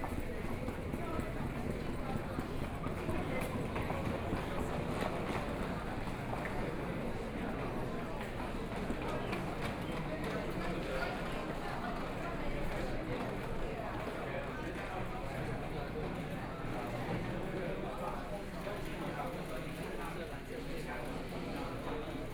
Kaohsiung Main Station, Taiwan - Walking in the station
Walking in the station, Warning sound broadcasting